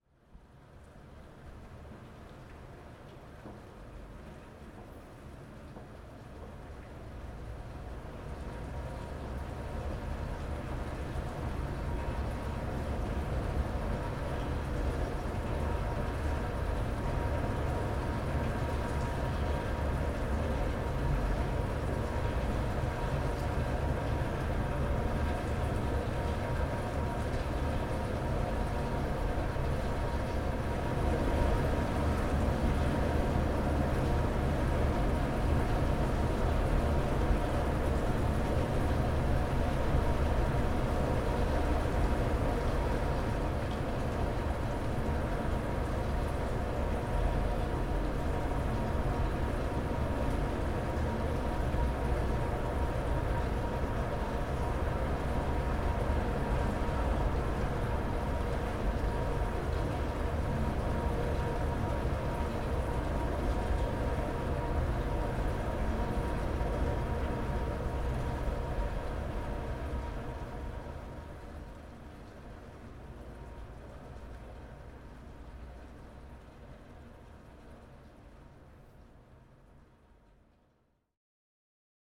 Vabaduse väljak, Tallinn, Estonia - Otsakool, vihm
Rain in big courtyard of Georg Ots Music Colledge in Old Town of Tallinn.
29 September 2019, 21:28